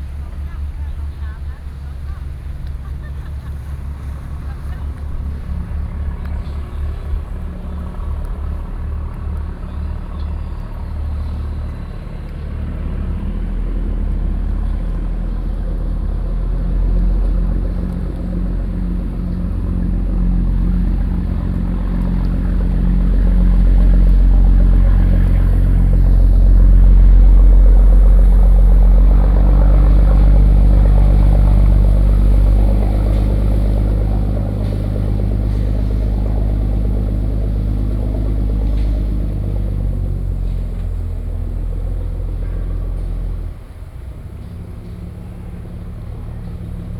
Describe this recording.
Fishing boats, Traveling through, Sony PCM D50 + Soundman OKM II